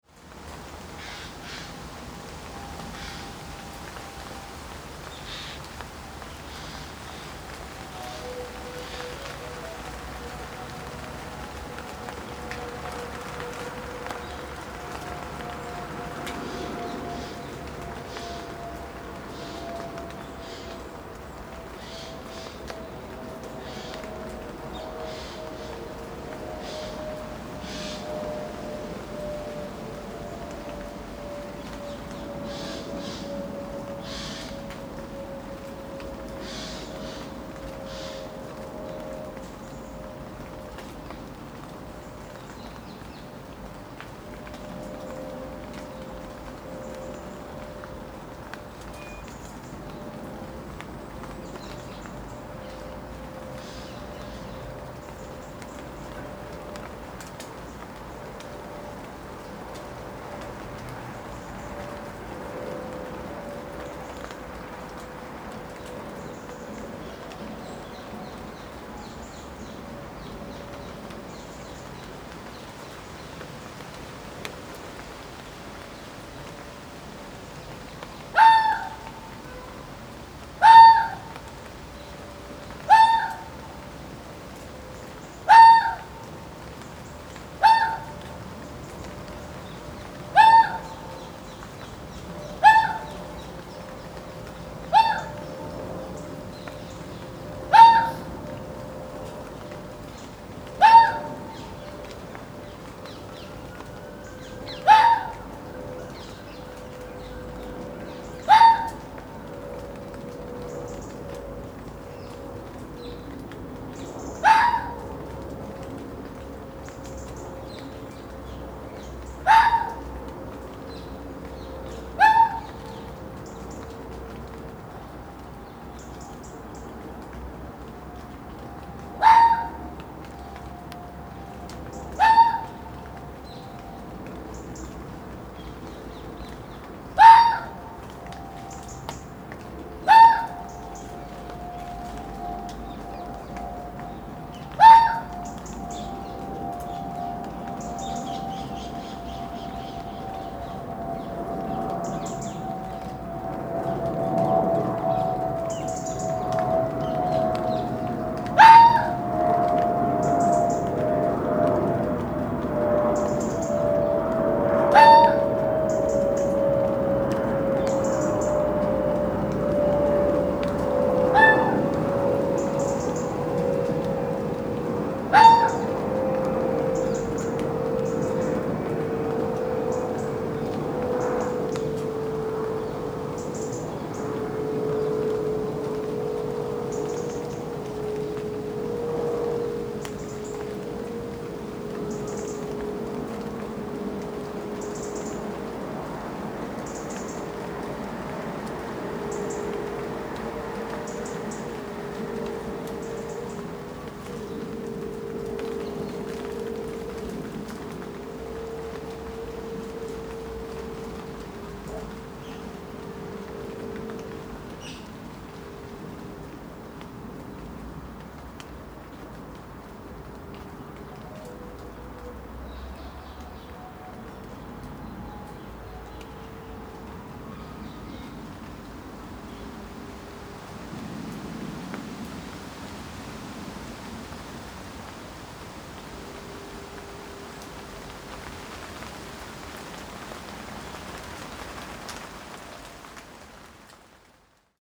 London Borough of Lewisham, Greater London, UK - Foxes, Ice Cream jingles, Jays, Planes and Rain
The edge of Hither Green Cemetery. Rainy South London evening.